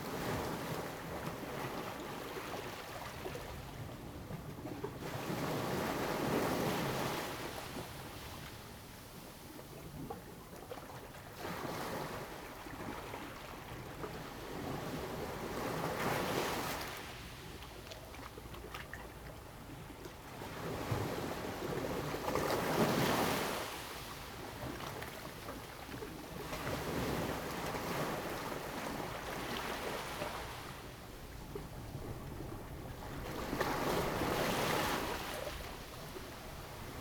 Jiayo, Ponso no Tao - Hiding in the rocks
Hiding in the rocks, In the beach, Sound of the waves
Zoom H2n MS +XY